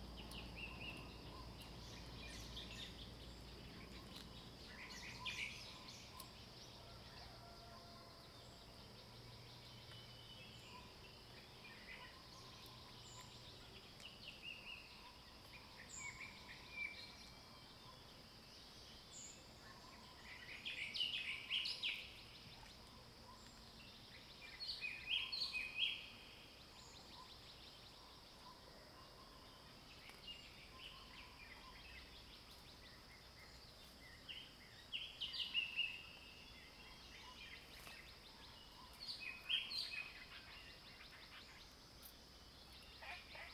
{"title": "TaoMi 綠屋民宿, Nantou County - Early morning", "date": "2015-04-29 05:39:00", "description": "Crowing sounds, Bird calls, Frogs chirping, Early morning\nZoom H2n MS+XY", "latitude": "23.94", "longitude": "120.92", "altitude": "503", "timezone": "Asia/Taipei"}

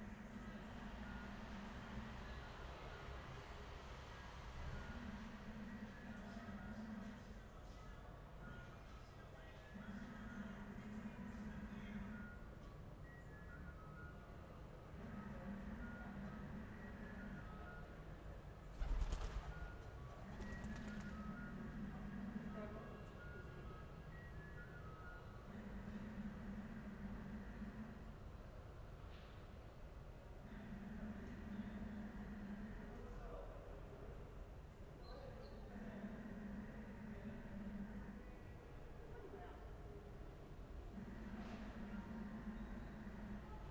{"title": "Ascolto il tuo cuore, città. I listen to your heart, city. Several chapters **SCROLL DOWN FOR ALL RECORDINGS** - Saturday afternoon without passages of plane in the time of COVID19 Soundscape", "date": "2020-05-09 14:59:00", "description": "\"Saturday afternoon without passages of plane in the time of COVID19\" Soundscape\nChapter LXXI of Ascolto il tuo cuore, città. I listen to your heart, city.\nSaturday May 9th 2020. Fixed position on an internal (East) terrace at San Salvario district Turin, sixty days after (but sixth day of Phase 2) emergency disposition due to the epidemic of COVID19.\nStart at 2:59 p.m. end at 4:00 p.m. duration of recording 01:01:00", "latitude": "45.06", "longitude": "7.69", "altitude": "245", "timezone": "Europe/Rome"}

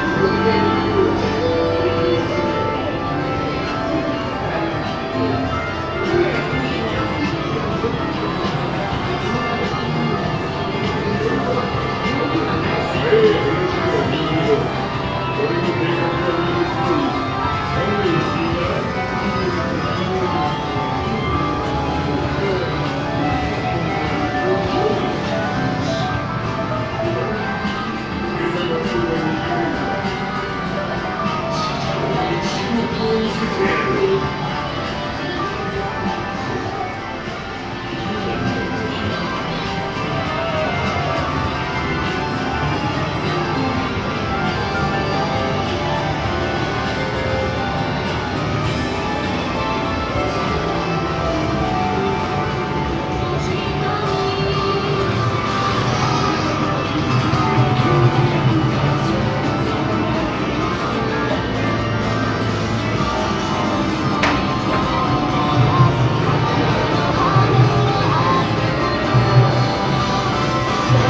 {"title": "takasaki, store, entrance area", "date": "2010-07-22 14:26:00", "description": "entrance area of a big store for clothings, game and fishing supply. here sounds of several machines for kids to win manga cards and other puppet stuff.\ninternational city scapes - social ambiences and topographic field recordings", "latitude": "36.32", "longitude": "139.03", "altitude": "97", "timezone": "Asia/Tokyo"}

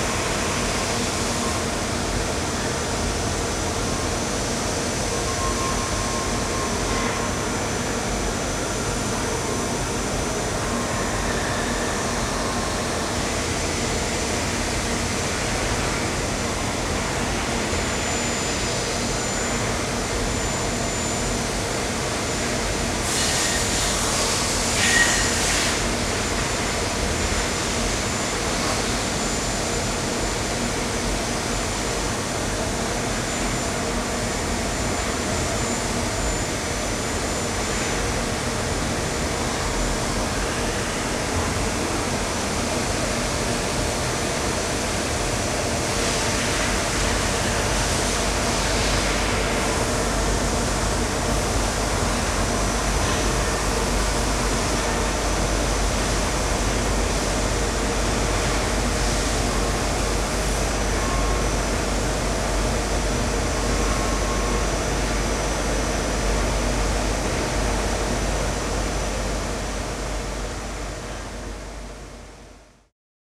{"title": "Tezno, Maribor, Slovenia - inside the factory", "date": "2012-06-16 16:50:00", "description": "recorded from the gated entrance into a working factory building in the tezno district of maribor, with no workers to be seen", "latitude": "46.53", "longitude": "15.67", "altitude": "274", "timezone": "Europe/Ljubljana"}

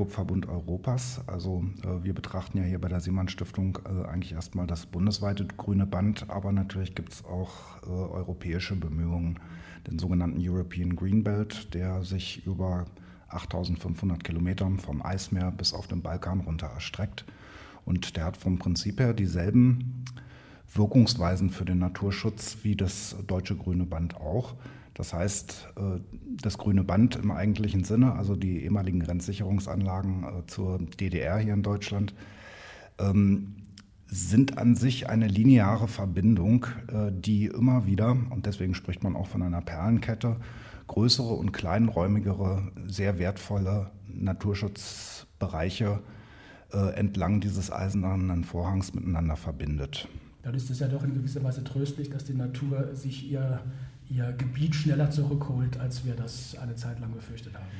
{"title": "gut herbigshagen - bei der sielmann-stiftung", "date": "2009-08-08 22:36:00", "description": "Produktion: Deutschlandradio Kultur/Norddeutscher Rundfunk 2009", "latitude": "51.52", "longitude": "10.31", "altitude": "261", "timezone": "Europe/Berlin"}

